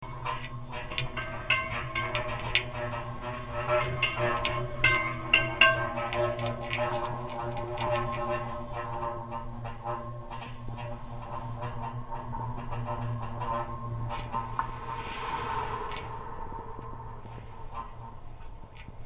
Batman Bridge, Tasmania alien powertools
Tamar River TAS, Australia